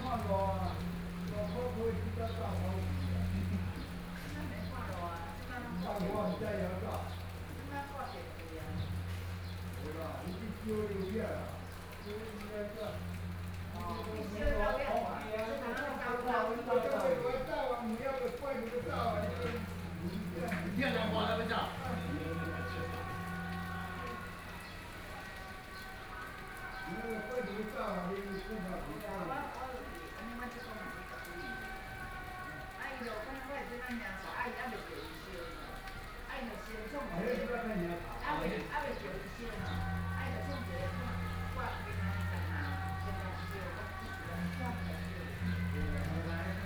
A group of people chatting, Funeral, Rainy Day, Small village, Traffic Sound, Birdsong
Sony PCM D50+ Soundman OKM II
Sanxing Township, Yilan County, Taiwan, July 2014